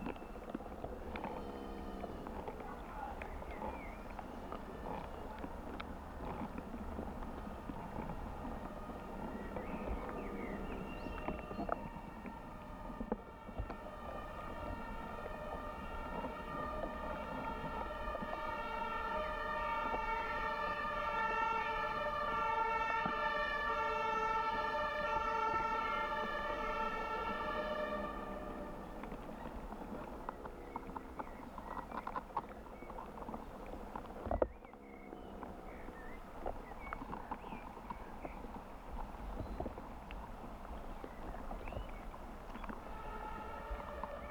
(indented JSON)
{
  "title": "Prinzessinengärten, Moritzplatz, Berlin, Deutschland - slightly subterranean soundscape",
  "date": "2022-05-20 18:45:00",
  "description": "Sounds of unclear origin mix with familiar sounds of the Berlin Rush hour, inkl. ambulance\n(Sony PCM D50, DIY mics)",
  "latitude": "52.50",
  "longitude": "13.41",
  "altitude": "34",
  "timezone": "Europe/Berlin"
}